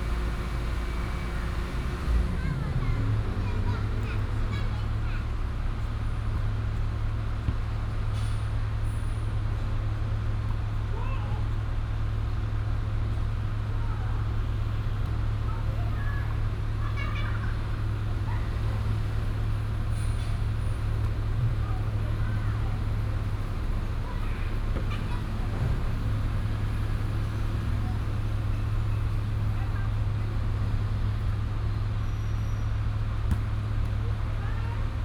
Dongfeng Park, Da'an Dist. - in the Park
Hot weather, in the Park, Traffic noise, the garbage truck